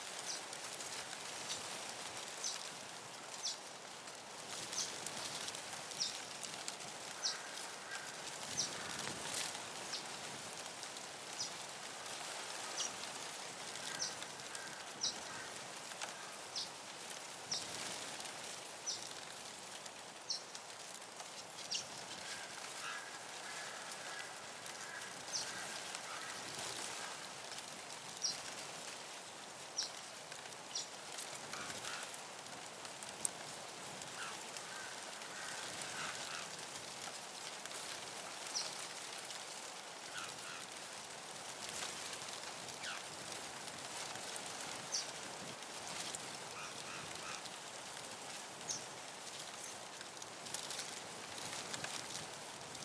Simpson's Gap, West MacDonnell Ranges, NT, Australia - Wind in the Reeds and Crows in the Sky - Simpson's Gap
A windy morning blowing the reeds by the Simpson's Gap waterhole with Crows flying overhead - DPA 4060 pair, Zoom H4n